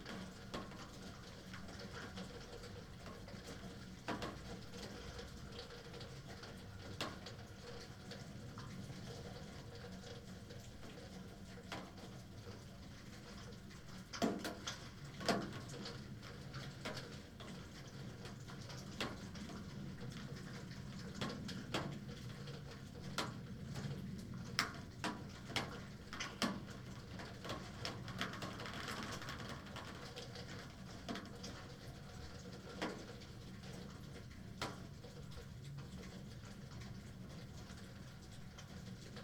berlin, friedelstraße: backyard window - the city, the country & me: backyard window, snowmelt, water dropping on different window sills
snowmelt, water dropping on different window sills, recorder inside of a double window
the city, the country & me: february 3, 2010